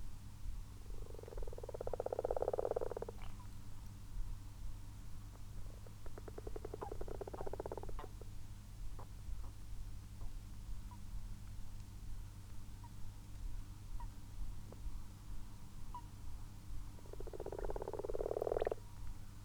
Malton, UK - frogs and toads ...
common frogs and common toads in a garden pond ... xlr sass on tripod to zoom h5 ... unattended time edited extended recording ... bird calls between 17:00 and 22:00 include ... tawny owl ... possible overflying moorhen ... plus the addition of a water pump ... half the pond is now covered with frog spawn ... the goldfish are in for a time of plenty ...
Yorkshire and the Humber, England, United Kingdom, 20 March 2022, ~23:00